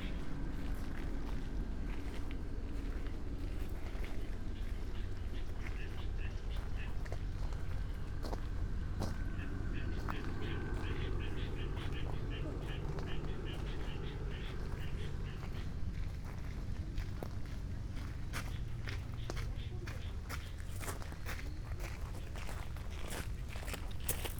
Nishihama shore, Shugakuin Imperial Villa, Kjoto - with helicopter
Kyoto, Kyoto Prefecture, Japan, 1 November 2014, ~16:00